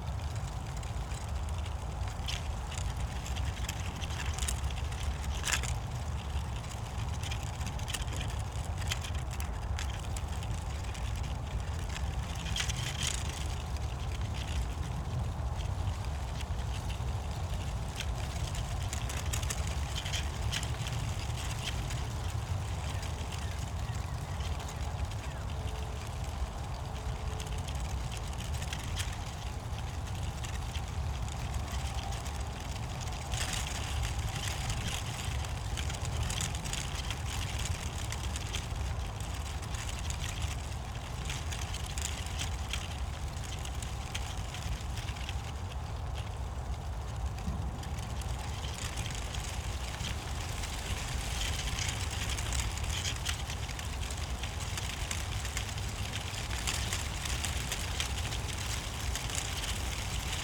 sound of dry oak leaves in the wind and traffic hum of the nearby motorway, on a bright winter day, Tempelhof, old airport area.
(SD702, AT BP4025)
Berlin, Germany, 28 December 2012